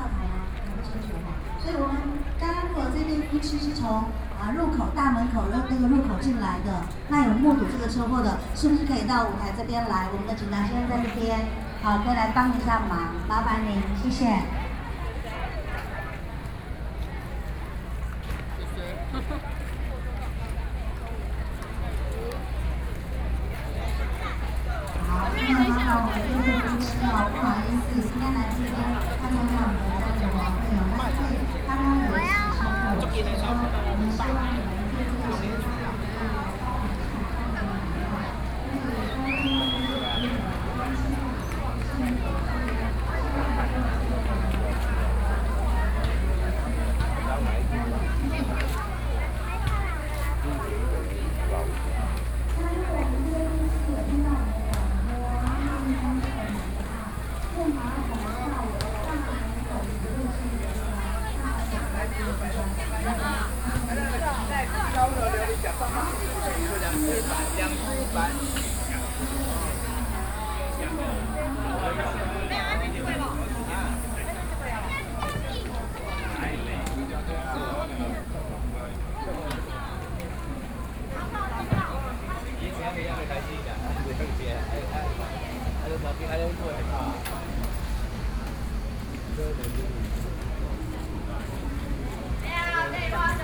Sanmin Senior High School, District, New Taipei City - Regional carnival
Fair, Selling a variety of food and games area, Binaural recordings, Sony PCM D50 + Soundman OKM II